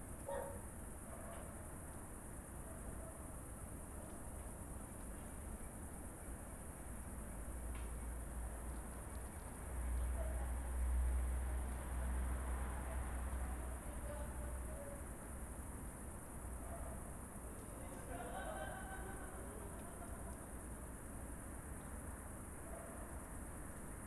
summer night ambience on the balcony of babica house
(SD702, Audio Technica BP4025)
Maribor, Slovenia, July 2012